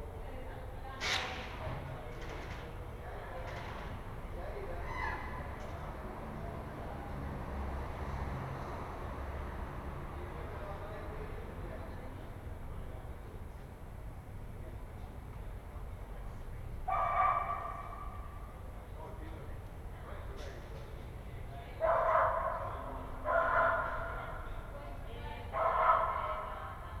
"Round one pm with sun and dog in the time of COVID19" Soundscape
Chapter XXXII of Ascolto il tuo cuore, città. I listen to your heart, city
Friday April 3rd 2020. Fixed position on an internal terrace at San Salvario district Turin, twenty four days after emergency disposition due to the epidemic of COVID19.
Start at 1:09 p.m. end at 01:42 p.m. duration of recording 33’04”.
Torino, Piemonte, Italia, 3 April 2020, ~13:00